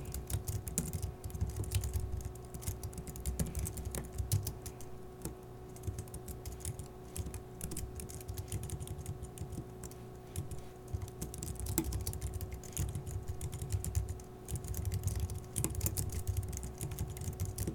ATLS 301 - A Day in an Office